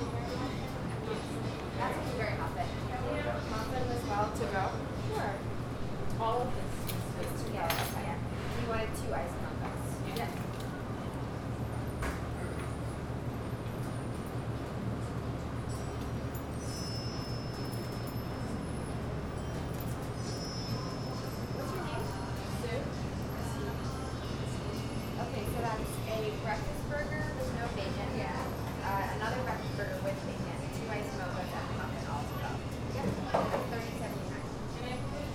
Recording at a cafe called On The Hill with a Zoom H4n Recorder